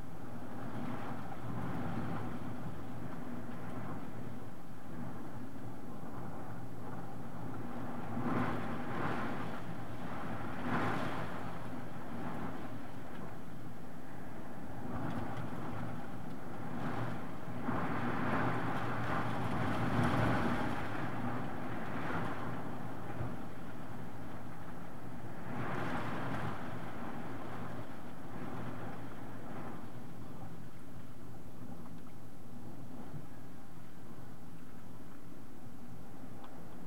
Angra do Heroísmo, Portugal - Lorenzo Hurricane
Lorenzo Hurricane beating the window where i was sleeping at a frightening night.